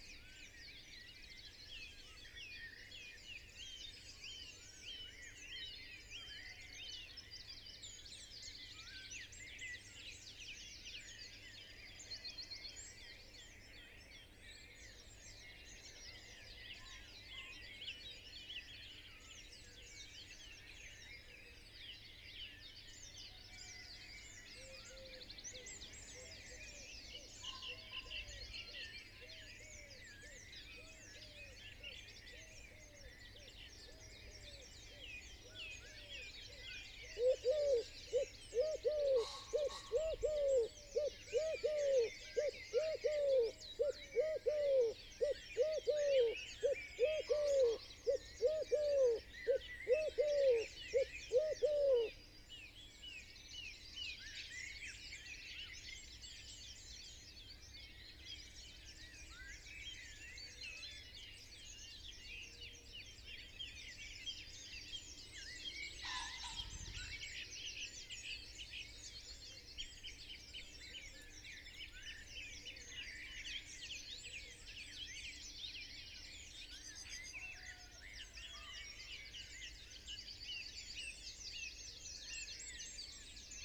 Unnamed Road, Malton, UK - dawn chorus ... 2020:05:01 ... 04.17 ...
dawn chorus ... from a bush ... dpa 4060s to Zoom H5 ... mics clipped to twigs ... bird song ... calls ... from ... blackbird ... robin ... wren ... tawny owl ... blackcap ... song thrush ... pheasant ... great tit ... blue tit ... dunnock ... tree sparrow ... collared dove ... wood pigeon ... some traffic ... quiet skies ...